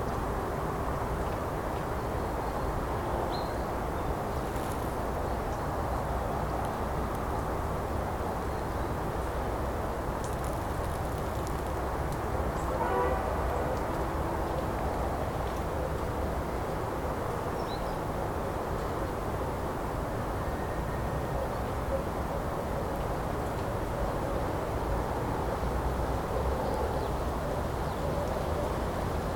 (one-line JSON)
{"title": "вулиця Шмідта, Костянтинівка, Донецька область, Украина - Звуки птиц в кустах", "date": "2018-10-10 08:23:00", "description": "Пение птиц в кустах и деревьях", "latitude": "48.53", "longitude": "37.69", "altitude": "82", "timezone": "Europe/Kiev"}